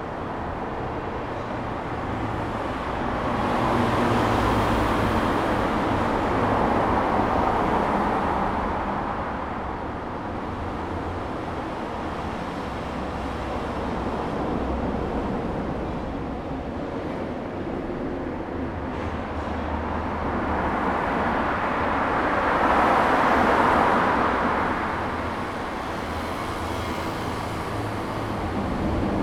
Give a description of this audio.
under the high-speed road, Traffic sound, Zoom H2n MS+XY